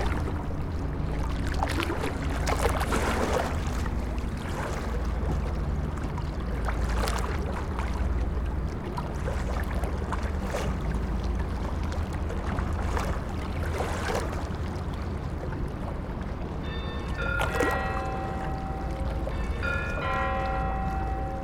Riverbank, Basel, Switzerland - (484) Waves, birds, engines and bells
Recording from the stairs of a riverbank atmosphere with ships' engines, waves splashing and bells at the end.
ORTF recording made with Sony PCM D-100.
Basel-Stadt, Schweiz/Suisse/Svizzera/Svizra